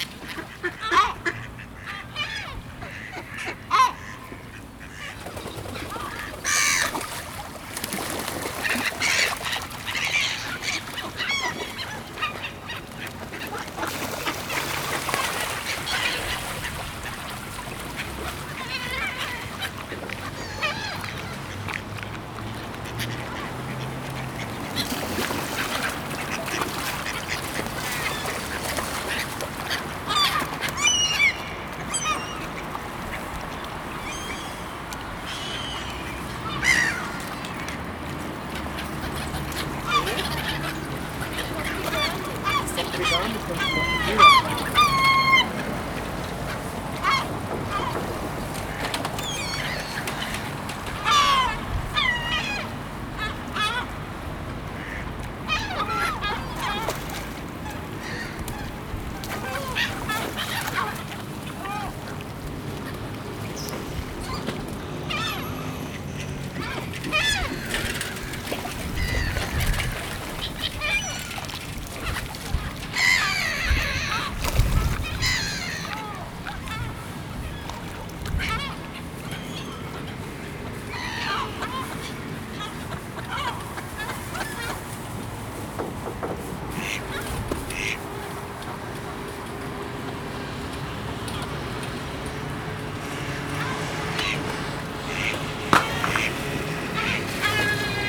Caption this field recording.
A man was feeding lots of birds, mainly gulls, mallards and coots. Traffic on the background and sometimes people passes. Recorded with Zoom H2n (Mid/Side recording).